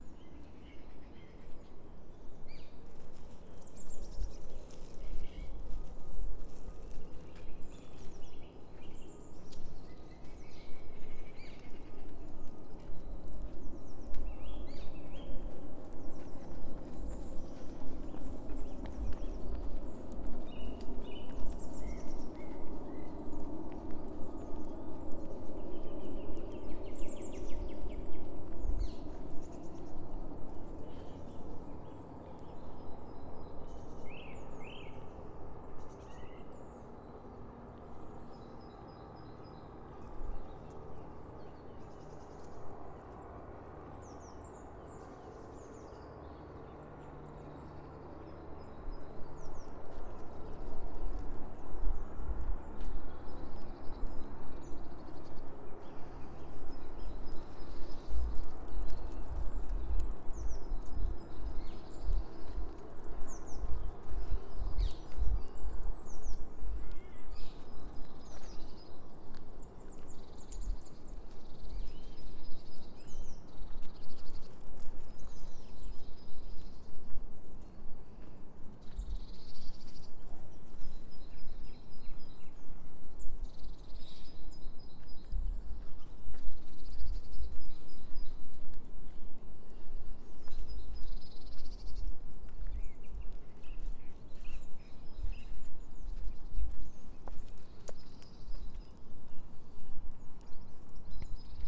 London, UK - Filter bed birds
Morning dog walk through the filter beds capturing the birdsong